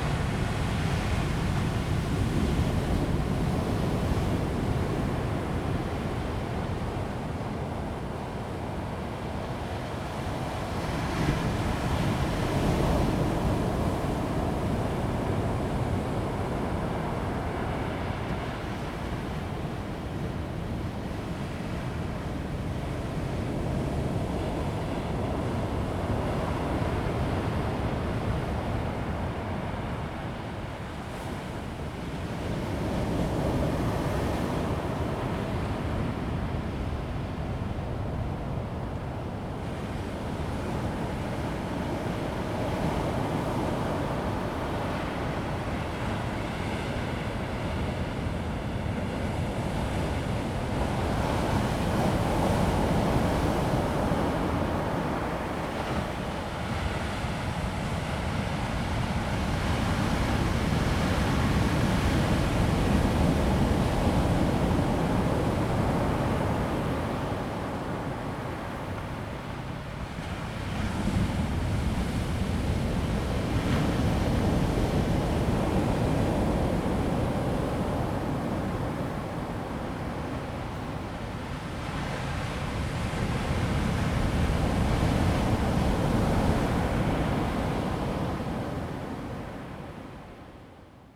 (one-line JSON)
{"title": "牡丹鄉台26線, Mudan Township - On the coast", "date": "2018-04-02 13:27:00", "description": "On the coast, Sound of the waves\nZoom H2n MS+XY", "latitude": "22.18", "longitude": "120.89", "altitude": "5", "timezone": "Asia/Taipei"}